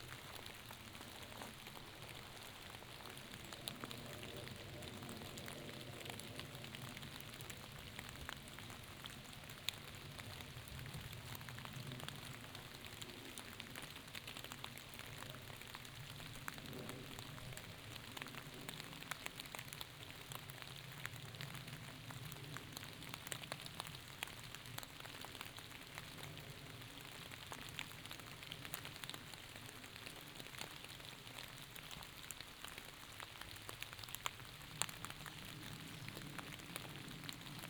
Netzow, Templin, Deutschland - walking in the forest, raining
walking through a forest near village Netzow, it's cold and raining
(Sony PCM D50, OKM2)